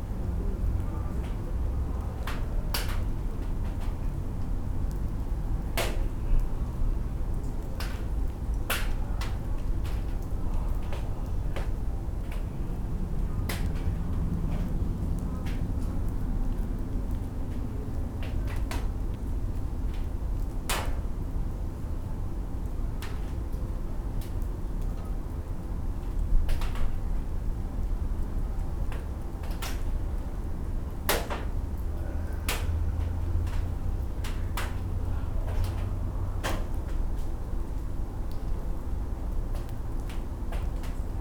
Jana III Sobieskiego housing complex - roof after rain
raindrops falling from trees on a tin roof of a shed that holds garbage containers. also sounds of traffic from a main street nearby and some late evening sounds from the surrounding buildings. (roland r-07)
31 May 2019, 22:16